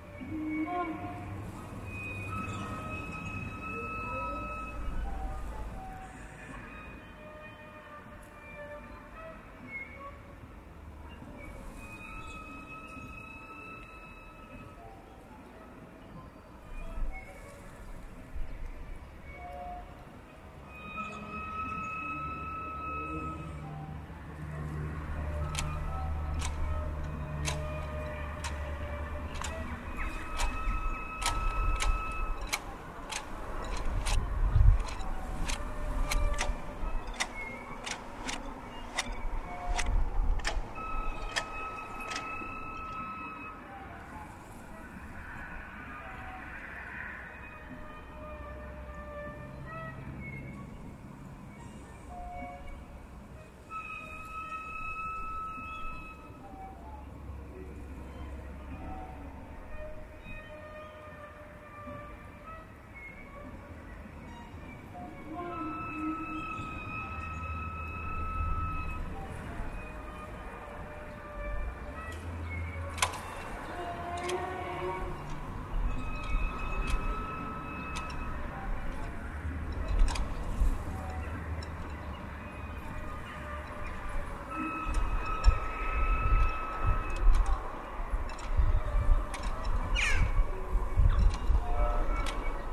East Visby, Visby, Sweden - Sad factory
"Sad factory" near Visby (near Terranova area) - a squeaky factory which sounds musical. One can distinguish "music instruments" like "flutes" and "drums" among these noises. Squeaky melodies were more complicated if the weather were windy. Seagulls, flag masts and cars are on background.
25 August 2005